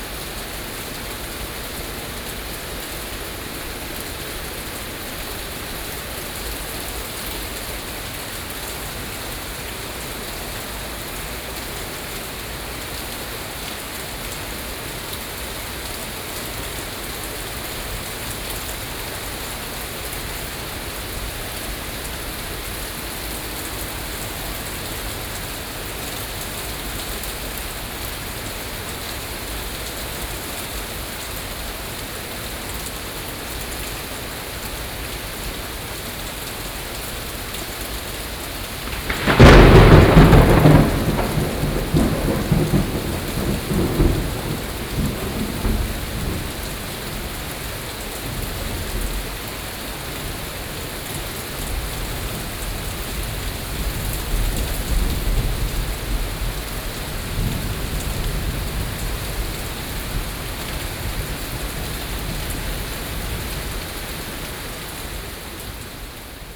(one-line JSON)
{"title": "Beitou - thunderstorms", "date": "2012-06-11 23:02:00", "description": "thunderstorms, Sony PCM D50 + Soundman OKM II", "latitude": "25.14", "longitude": "121.49", "altitude": "23", "timezone": "Asia/Taipei"}